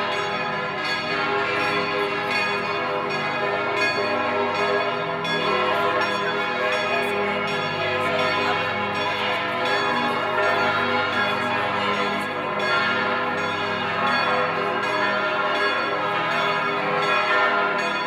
Aarau, Kirchplatz, Maienzug Schweiz - Maienzug Churchbells
After the Maienzug the bells of the church are tolling for a long time.
Aarau, Switzerland, 1 July 2016